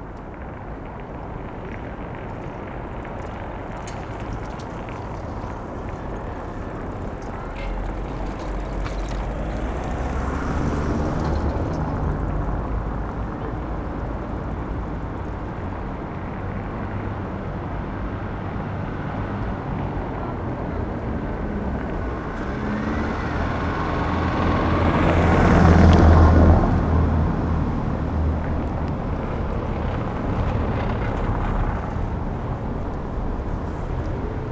Berlin: Vermessungspunkt Friedel- / Pflügerstraße - Klangvermessung Kreuzkölln ::: 06.12.2011 ::: 15:56
Berlin, Germany, 6 December 2011, 15:56